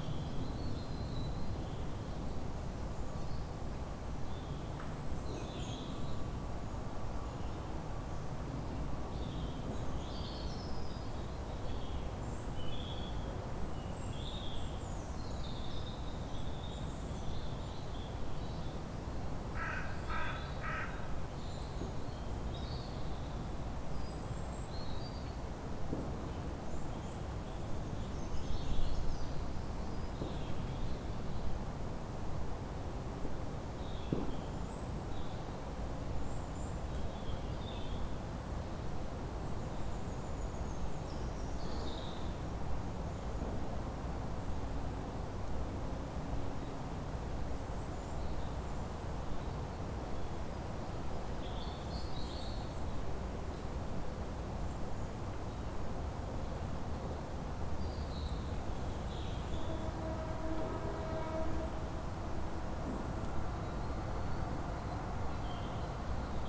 Cofton Hackett, Birmingham, UK - Lickey Hills (inside)
Recorded inside Lickey Hills Country Park with a Zoom H4n.
21 September